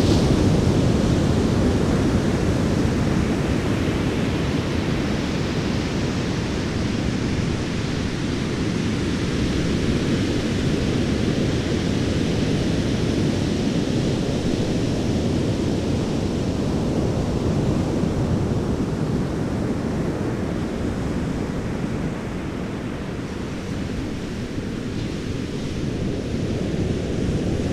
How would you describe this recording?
thick and rich surf sounds at Stinson beach California